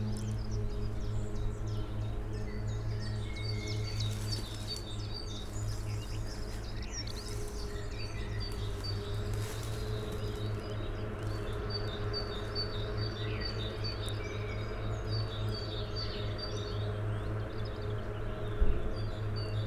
Gelderse Toren Spankeren, Netherlands - Gelderse Toren
mix of 2 Synchronized stereo recordings. 2x spaced omni + telinga parabolic mic.
Birds, Boat, Tractor.